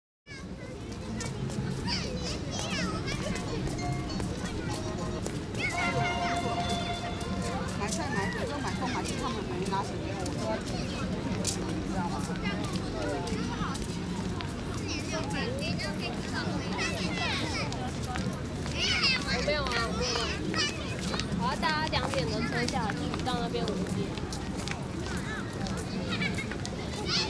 仁愛公園, Yonghe Dist., New Taipei City - in the Park
Children, In Park
Sony Hi-MD MZ-RH1 +Sony ECM-MS907
New Taipei City, Taiwan, 30 June 2011